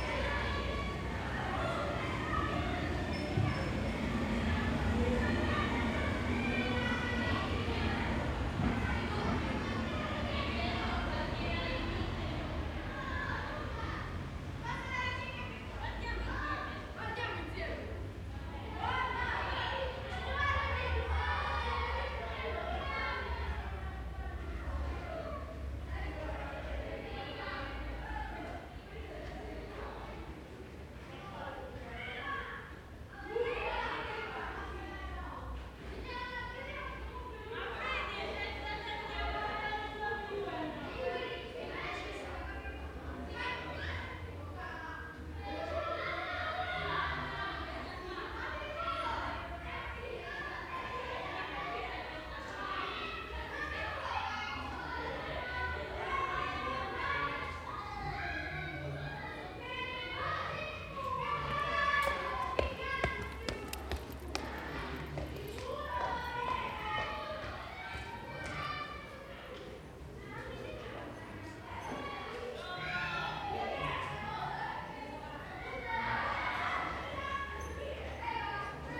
Piazza del Duca/Via del Carmine - Children playing in the alleyways, passers-by.
[Hi-MD-recorder Sony MZ-NH900 with external microphone Beyerdynamic MCE 82]